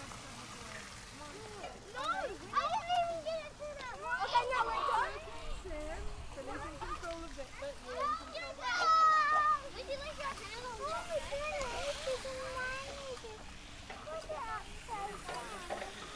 Kids playing with water pump, auger, metal dams at water playground.
PCM-D50 w on-board mics